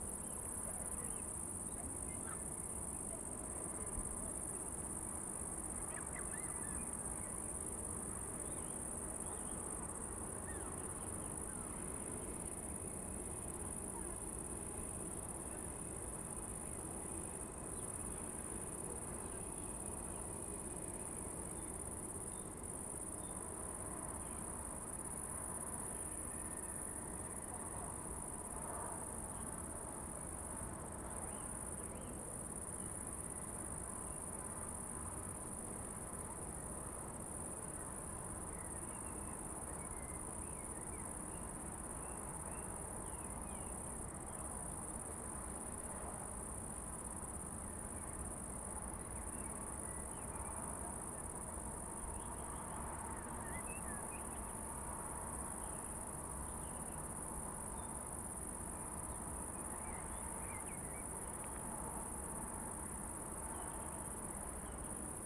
Unnamed Road, Praha, Česko - Crickets in forest park Letňany
Crickets in the city on summer evening. Cars in the background.
Zoom H2n, 2CH, handheld.